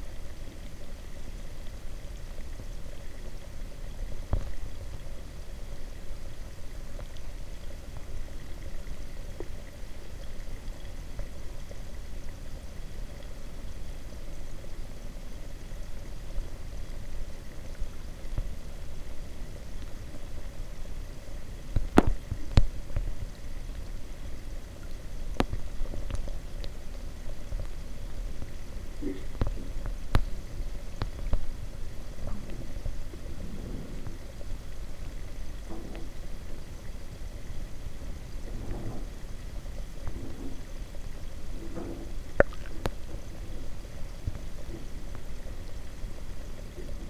막걸리 만들기 과정 (시작 96시 후에) Rice wine fermentation (4th day) - 막걸리 만들기 과정 (시작 96시 후에)Rice wine fermentation (4th day)
막걸리 만들기 과정_(시작 96시 후에) Rice wine fermentation (4th day)